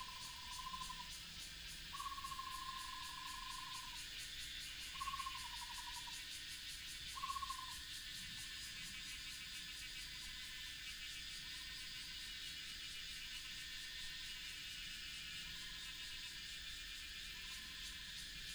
東湖, 大溪區環湖路一段 - Bird and Cicada
Bird call, Cicada cry, Traffic sound
Daxi District, Taoyuan City, Taiwan, 9 August 2017, ~18:00